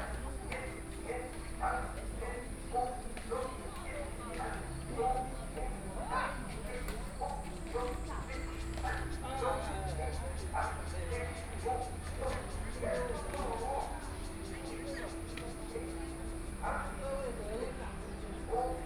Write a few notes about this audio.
Birdsong, Morning at Park, Many older people are sports and chat, Binaural recordings